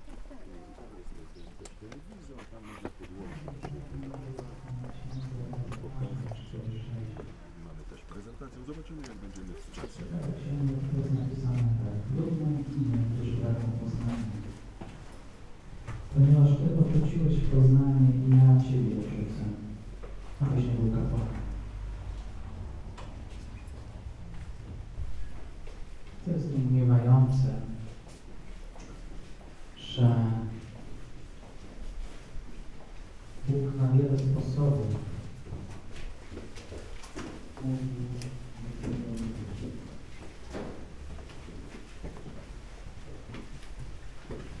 Synagoge, Dzierżoniów, Polen - into the Synagogue
on the way into the synagogue, we are passing a christian church service. As Rafael told us, the place is open to everybody.
(Sony PCM D50)
21 October, ~12:00